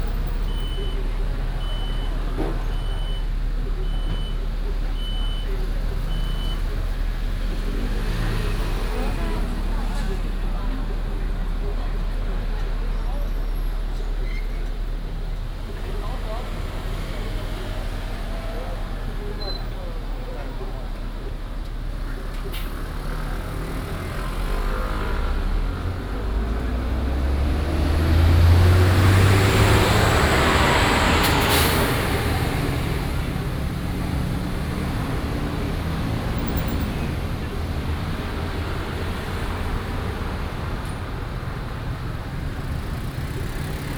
Ln., Beixin Rd., Tamsui Dist., New Taipei City - the corner of the road
In the corner of the road, Fried chicken shop, Traffic Sound
Binaural recordings
19 September 2015, 22:15